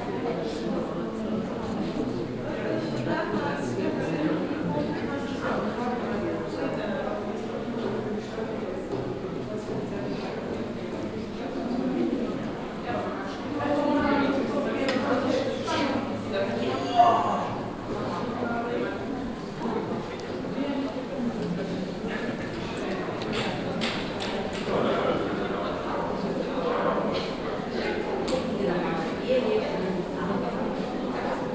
hannover, museum august kestner, museum night
atmosphere at the august kestner museum at the open public museum night 2010 - a walk thru the floors
soundmap d - social ambiences and topographic field recordings
Hanover, Germany